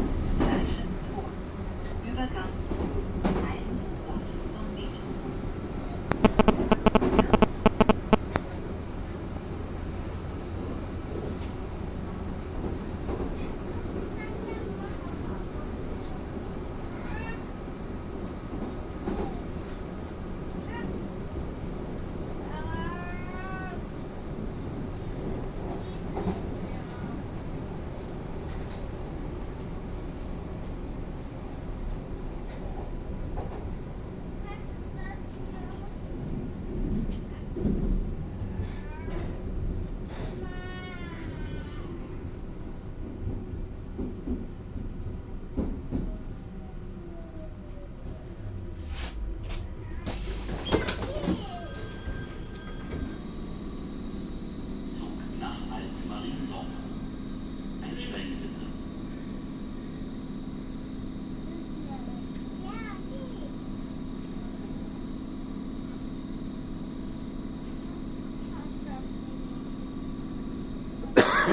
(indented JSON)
{
  "title": "Kreuzberg, Berlin, Deutschland - tube",
  "date": "2013-01-10 12:30:00",
  "description": "ride with Berlin tube, 2 stations, from \"Kochstraße\" southward. \"h2 handyrecorder\".",
  "latitude": "52.51",
  "longitude": "13.39",
  "altitude": "42",
  "timezone": "Europe/Berlin"
}